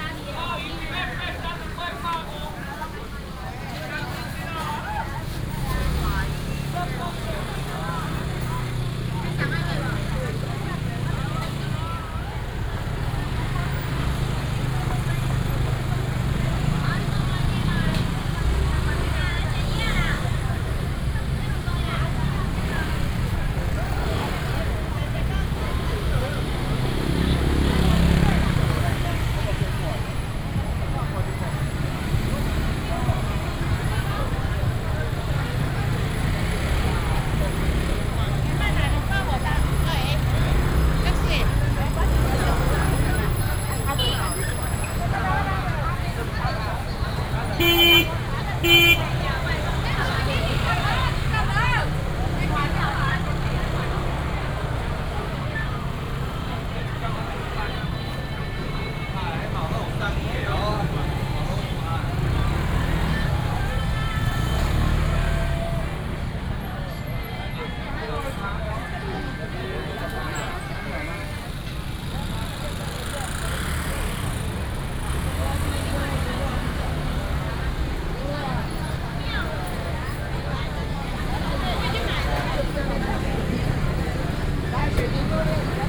Yonghe St., West Dist., Chiayi City - Walking through the traditional market
Walking through the traditional market, Traffic sound, Many motorcycles
18 April 2017, 09:15